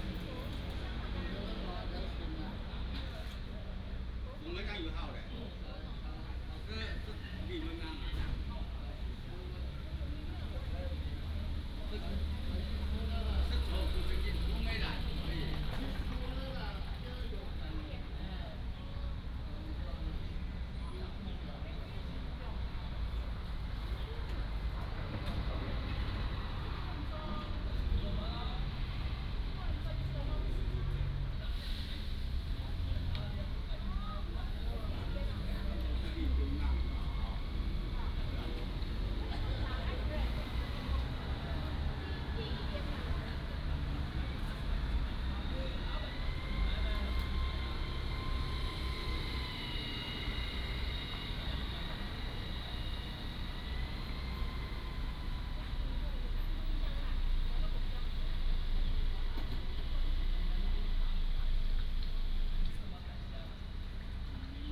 Xinxing Rd., Tongluo Township - Small bus station
Small bus station, Traffic sound, The old man is singing, Dog sounds
Tongluo Township, Miaoli County, Taiwan, 16 February 2017, 10:46